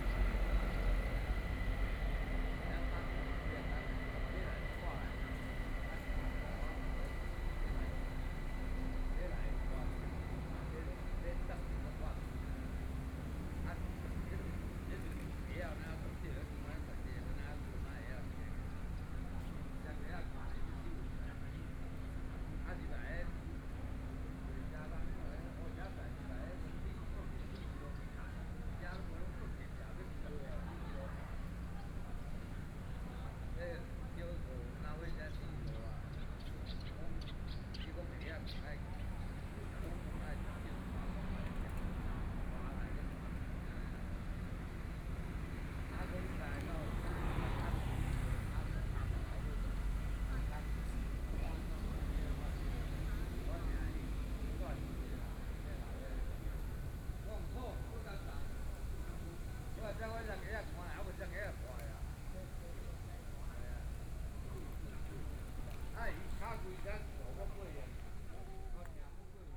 Ciaotou Sugar Refinery, Kaohsiung City - Under the tree
Rest in the park area, Sound from Transit Station, Hot weather